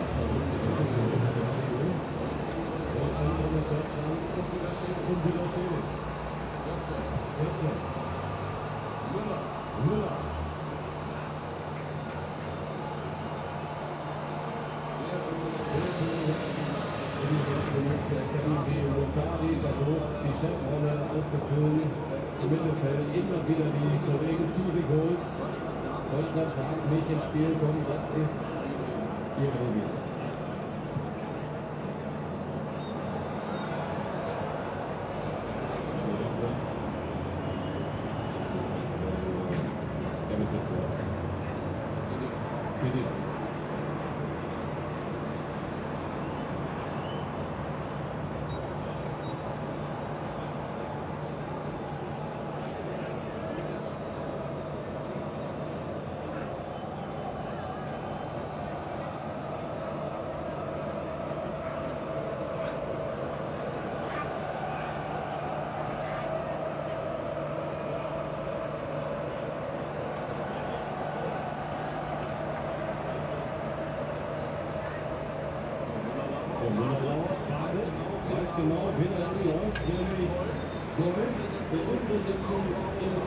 Nordstadt, Bonn, Deutschland - Bonn - Public viewing in two adjacent pubs
Bonn - Public viewing in two adjacent pubs. Ghana vs. Germany.
[Hi-MD-recorder Sony MZ-NH900, Beyerdynamic MCE 82]
Bonn, Germany